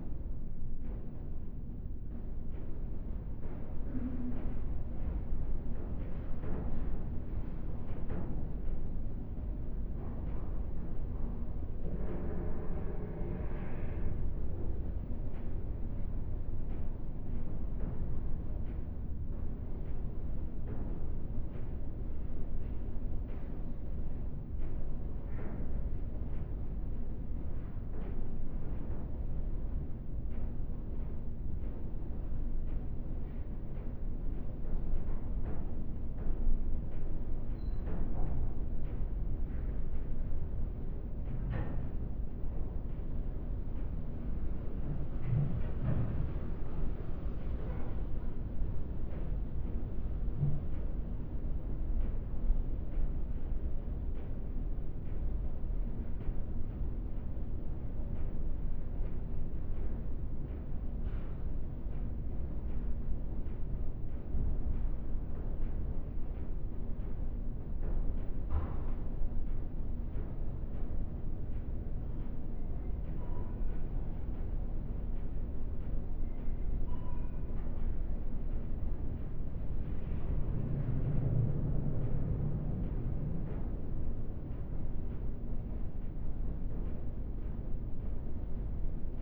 At the underearth pre room hall to the private chael and some seperated farewell rooms.
The sound of the carpeted silent ambience with the crackling accents of some electric lights and wooden doors. In the background some voices from the entrance.
This recording is part of the intermedia sound art exhibition project - sonic states
soundmap nrw - topographic field recordings, social ambiences and art places